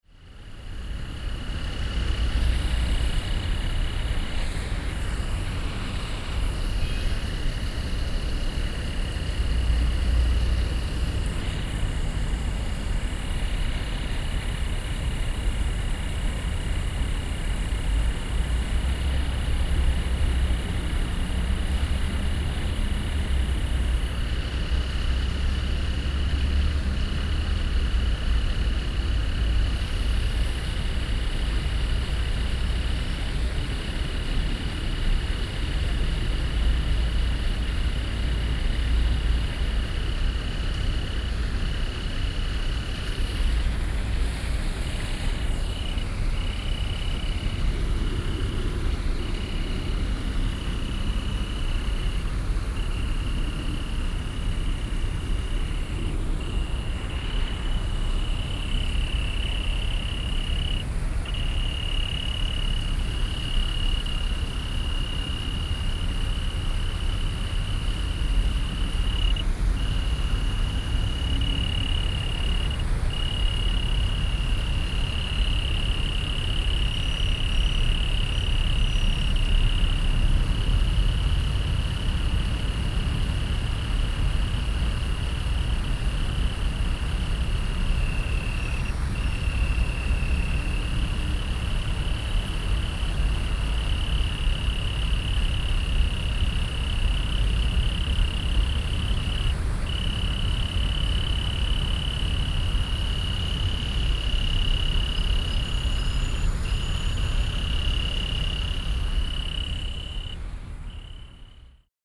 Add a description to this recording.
Frogs calling, Sony PCM D50 + Soundman OKM II